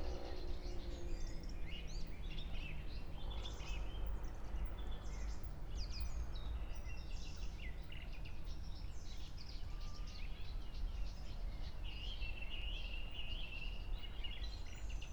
21:51 Berlin, Wuhletal - Wuhleteich, wetland